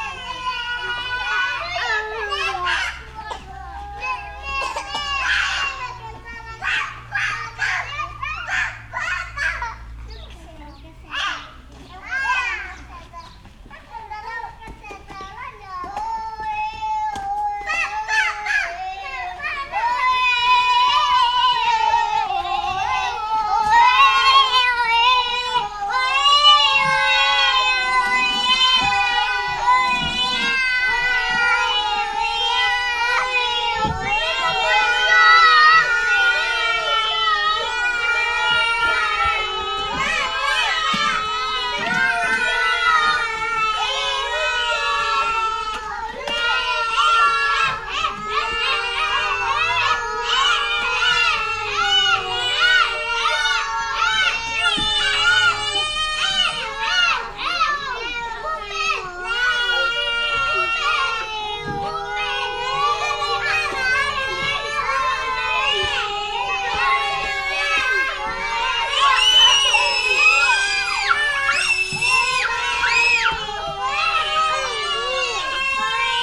SBG, El Patufet - Niños en el patio de juegos
Los más pequeños de la escuela infantil El Patufet se divierten en su patio el último dia antes de las vacaciones de verano.